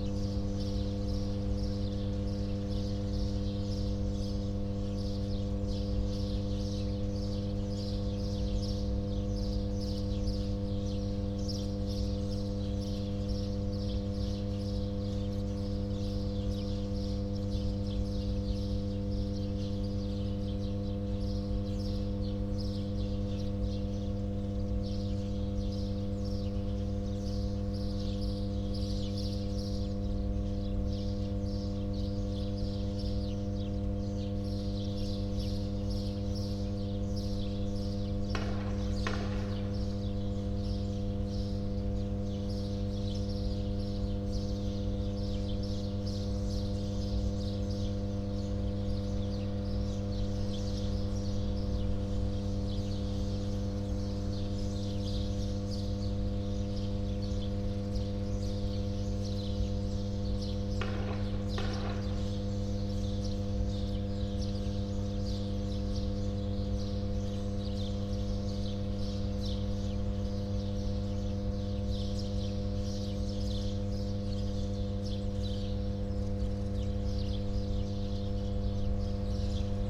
This terminal station is located a few hundred metres away from Qalet Marku Bay, where the submarine cable was pulled ashore in December 2013. At the Terminal Station, electricity from the submarine cable will be received at 220kV and stepped down to 132kV. It is then fed to the Maltese grid via cables passing through a purposely-built 6.5 kilometres tunnel leading to the Kappara Distribution Centre. The cables connecting the Terminal Station to the Distribution Centre comprise three circuits, each with three single core cables in trefoil formation. At the other end of the terminal, the Interconnector cable heads towards Sicily through an 850 metre underground culvert until it reaches Qalet Marku Bay, at Bahar ic-Caghaq.
Some shooting is going on nearby.
(SD702, DPA4060)